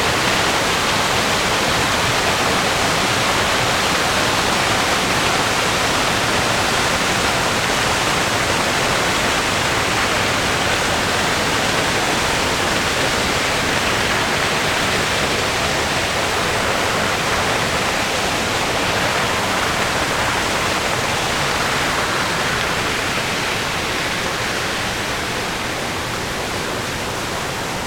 Water falls, Plitvice Lakes, Croatia, Zoom H6